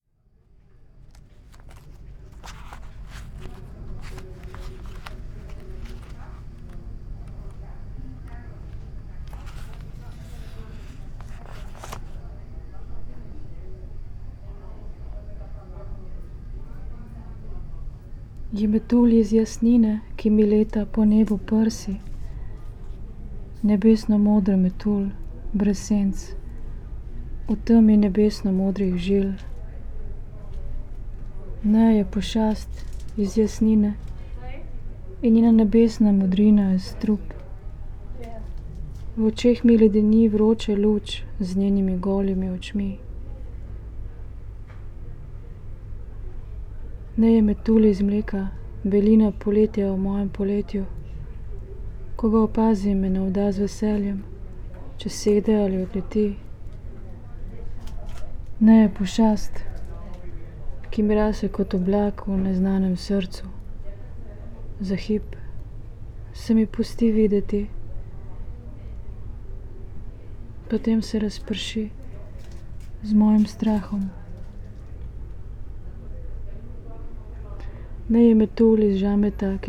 tight emptiness between neighboring houses, Rovinj - reading poem
reading poem Pošast ali Metulj? (Mostru o pavea?), Pier Paolo Pasolini
Rovinj, Croatia